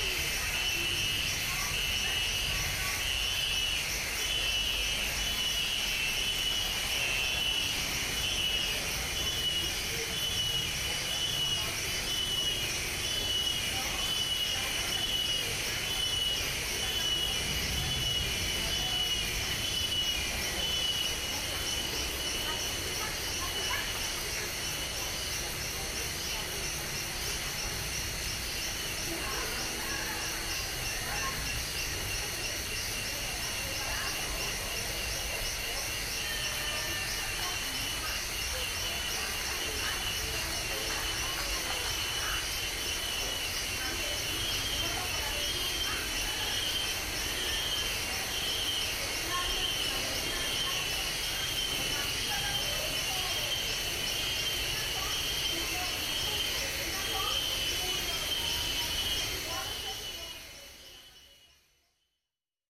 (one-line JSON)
{"title": "Anapoima-La Mesa, La Mesa, Cundinamarca, Colombia - Popular neighborhood, lower part of La Mesa – 5 PM", "date": "2021-05-12 17:00:00", "description": "Little Jungle atmosphere with inhabitants. In this audio we can find a particular sound due to the fact that within an inhabited place we find environmental characteristics as if it were a small jungle. The fundamental sound fed by a chorus of insects playing in the background is the perfect one to make us believe that we are not in a municipality, but unfortunately the voices of the people and a slight background traffic act as a sound signal that reminds us that we are in a inhabited place. Finally, the king of this environment and the one that allows it to be quite pleasant, is the \"Chicharra\" who with its particular sound mark emits a very strong sound, so much so that it manages to stand out from the other insects that are present in the place.\nTape recorder: Olympus DIGITAL VOICE RECORDER WS-852", "latitude": "4.62", "longitude": "-74.47", "altitude": "1223", "timezone": "America/Bogota"}